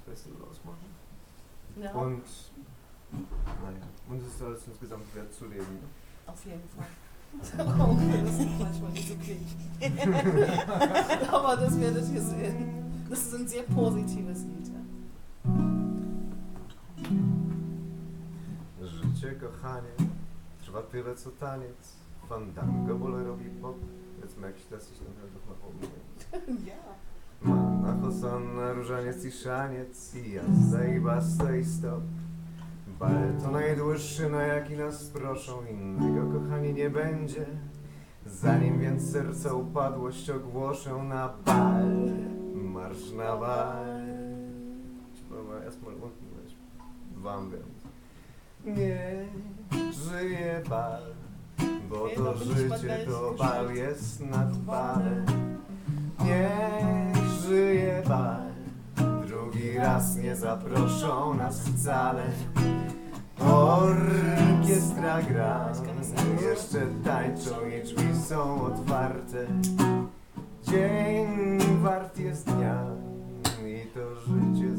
Westtünnen, Hamm, Germany - Anna's Songs...
…and continuing till dawn…
more songs at